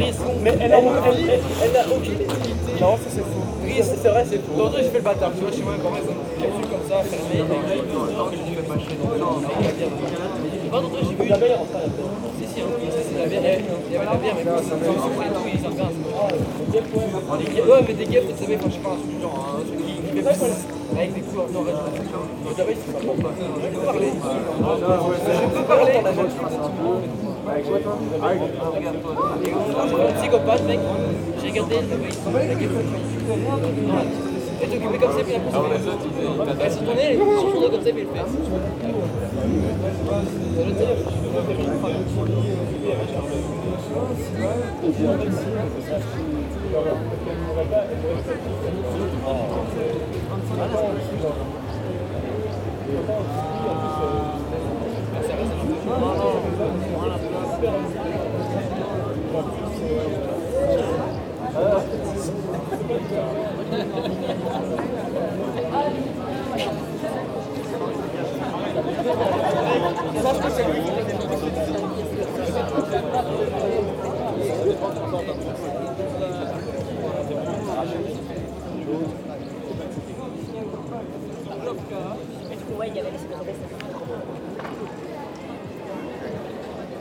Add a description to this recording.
Sound of my city. In first stationary on the 3 first minutes, young people playing football. After, this is a walk into the city. You can hear all the bars, the restaurants, and simply people drinking beers or juices into the streets. Also young people cheating, a baby and a few tourists walking... This is a welcoming city. It's a quiet business day and everybody is easygoing.